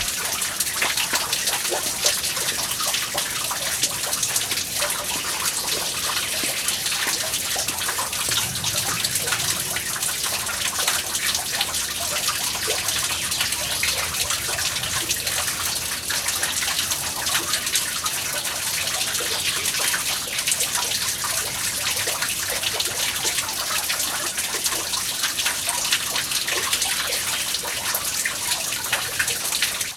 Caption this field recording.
Eremitage, Drachenhoehle - little fountain